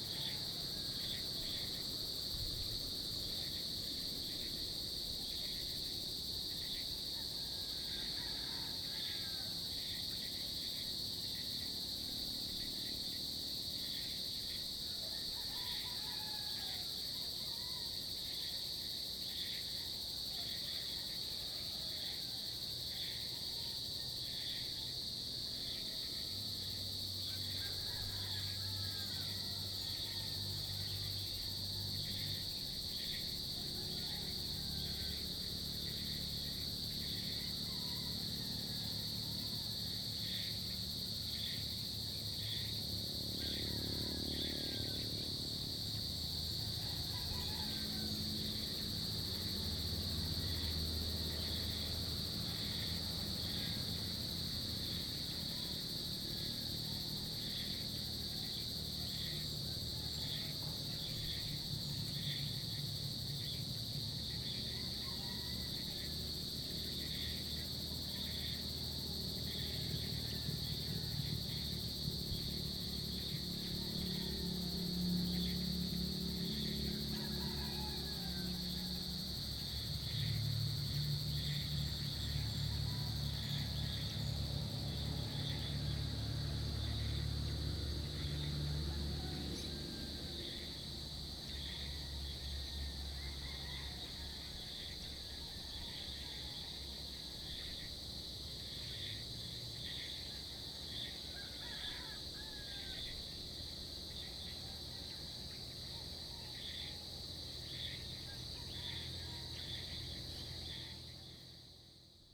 Iruhin East, Tagaytay, Cavite, Filippinerna - Tagaytay Iruhin East Valley #3
Sounds captured after dawn by the valley along Calamba Road between Tagaytay Picnic Grove and People´s Park in the Sky. Birds, insects, lizards, roosters waking up and dogs barking. Some traffic by this hour of late night/early morning. WLD 2016